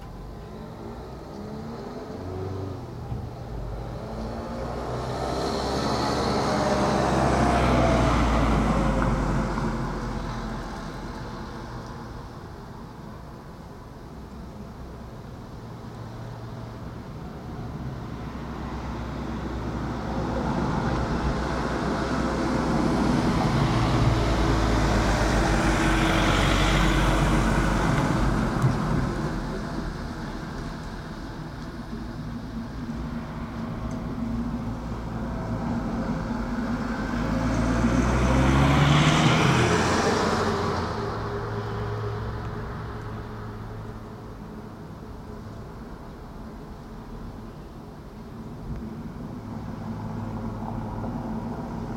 Boule et Bill, Jette, Belgique - Waiting at the bus stop
Cars, a little wind.
Région de Bruxelles-Capitale - Brussels Hoofdstedelijk Gewest, België / Belgique / Belgien, July 2022